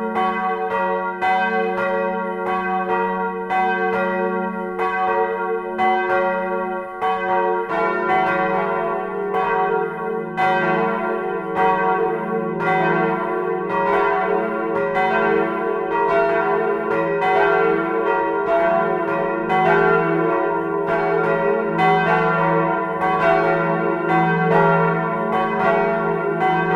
Gembloux, Belgique - Gembloux bells
The Gembloux belfry bells, ringed all together by Emmanuel Delsaute.